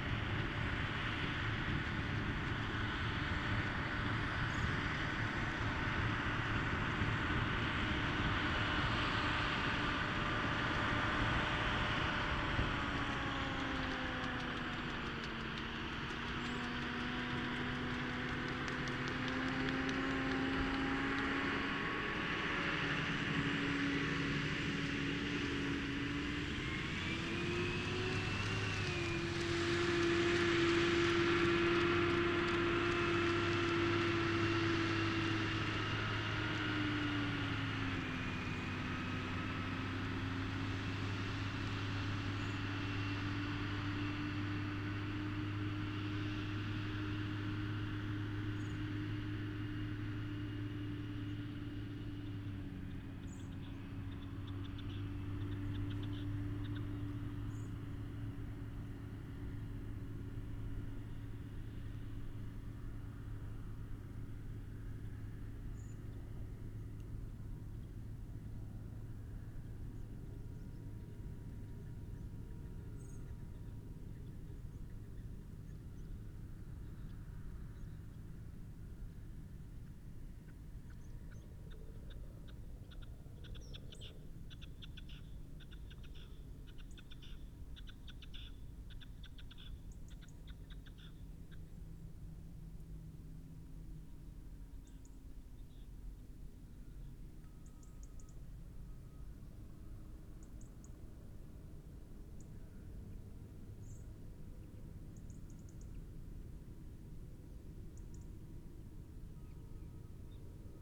Green Ln, Malton, UK - muck spreading ...
muck spreading ... two tractors at work ... dpa 4060s in parabolic to MixPre3 ... bird calls ... red-legged partridge ... pheasant ... meadow pipit ... crow ...